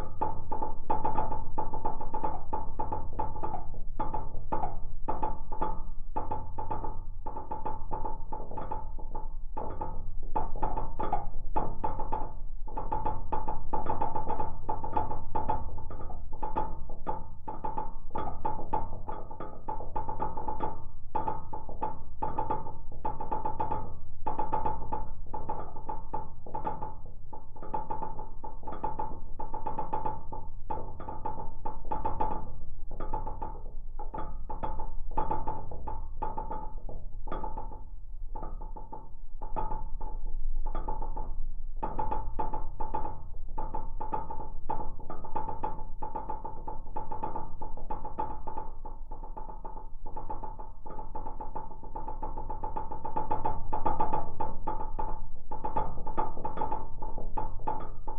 Daugavpils, Latvia, lamp pole
new LOM geophone on lamp pole on a new bridge